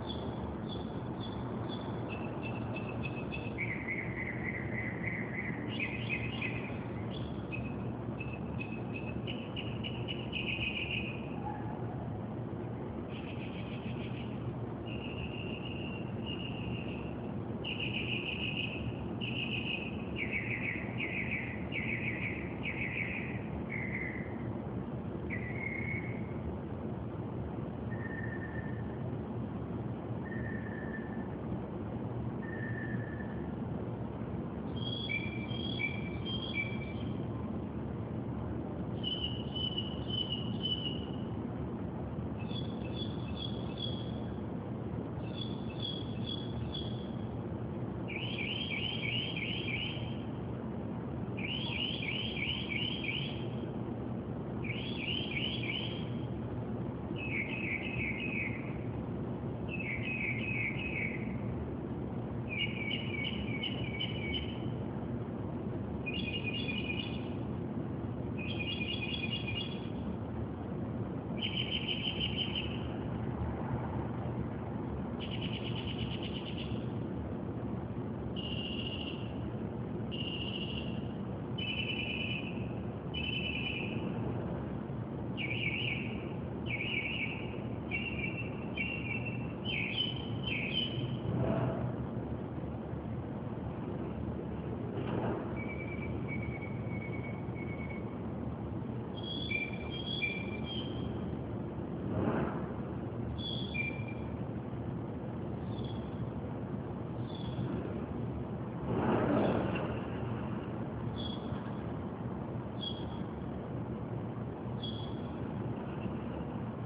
jefferson ave, brooklyn, new york city
bird singing and chirping verious car alarm sounding songs in brooklyn - with occasional street noises such as sirens
Brooklyn, NY, USA, June 2010